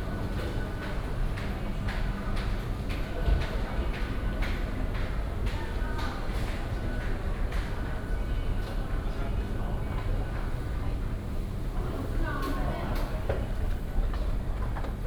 Xindian District Office Station, New Taipei City - Walking into the MRT station
Walking into the MRT station
New Taipei City, Taiwan, 25 July, 18:33